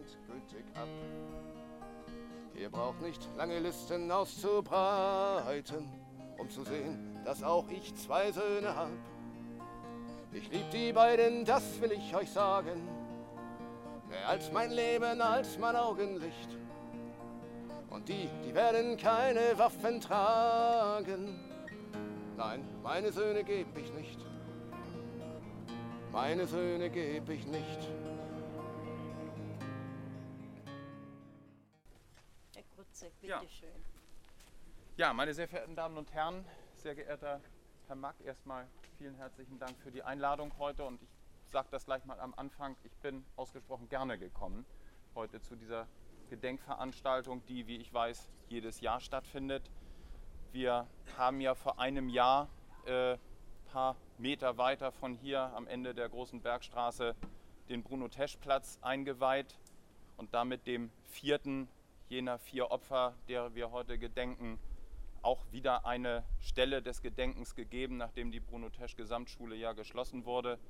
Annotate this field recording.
Rede von Andreas Grutzeck, Schatzmeister der CDU Fraktion & Präsident der Bezirksversammlung Hamburg Altona